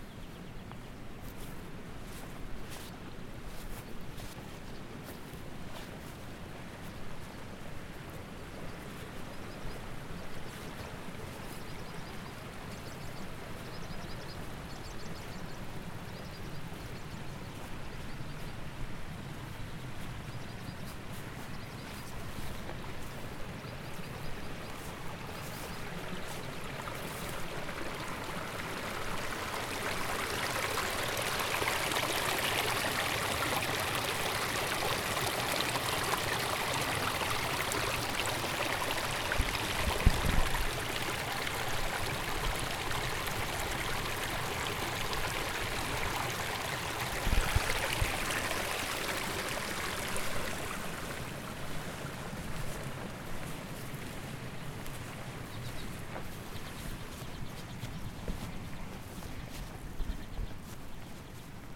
Wiese und Bach auf der Alp, wenig Wind, Wetter durchzogen mit blauem Himmel, Mond erscheint so langsam
Wiler (Lötschen), Schweiz, 8 July, 18:33